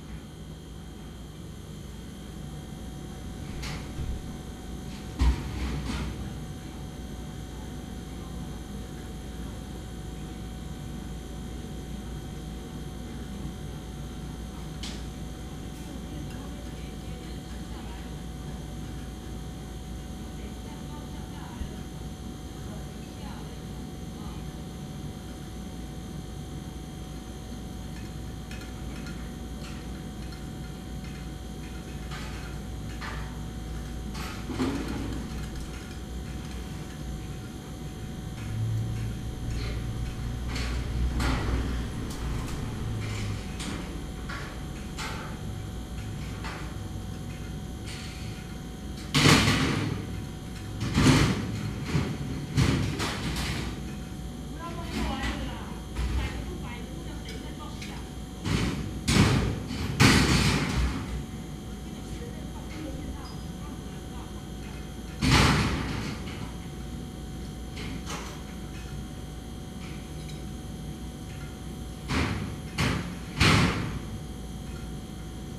No., Fuqun Street, Xiangshan District, Hsinchu City, Taiwan - Scaffolding Removal
Workers take down scaffolding from a nearby house and load it into a truck. Fuqun Gardens community. Recorded from the front porch. Stereo mics (Audiotalaia-Primo ECM 172), recorded via Olympus LS-10.